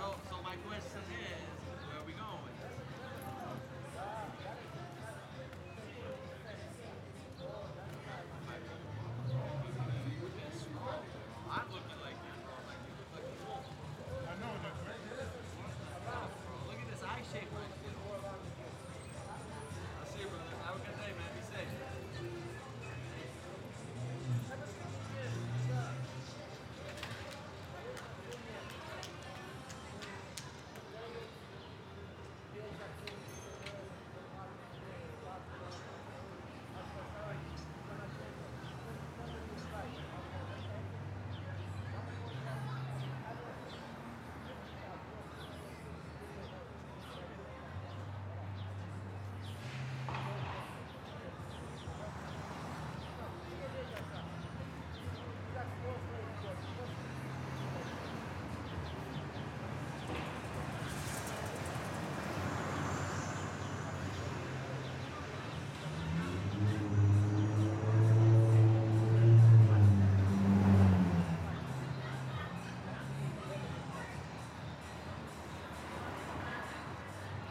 Ave, Ridgewood, NY, USA - 71st Avenue Plaza
Sunday afternoon at 71st Avenue Plaza, Ridgewood.